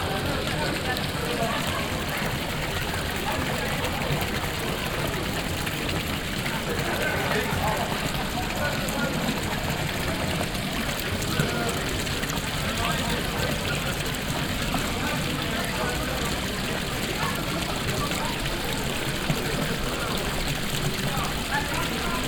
diekirch, market place, fountain
On the market place in the evening at a fountain that shows the figure of a famous donkey fairytale. In teh background a group of women celebrating a bachelor party.
international village scapes - topographic field recordings and social ambiences
8 August 2011, 20:35